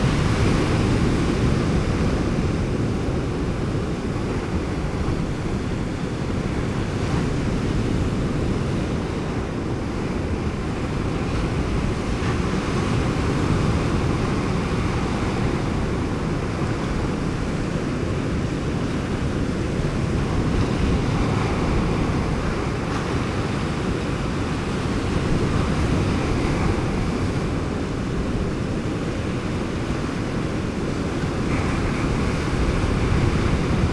Novigrad, Croatia - roaring sea
blue, dark night, white seahorses riding ...
8 July 2014, 22:28